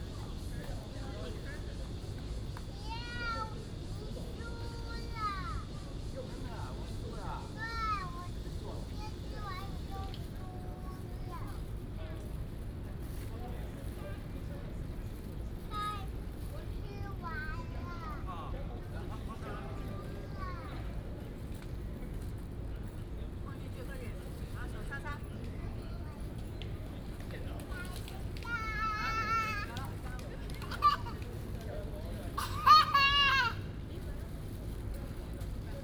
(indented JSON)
{
  "title": "臺灣大學, Da'an District, Taipei City - The university campus at night",
  "date": "2015-07-25 18:58:00",
  "description": "The university campus at night",
  "latitude": "25.02",
  "longitude": "121.54",
  "altitude": "11",
  "timezone": "Asia/Taipei"
}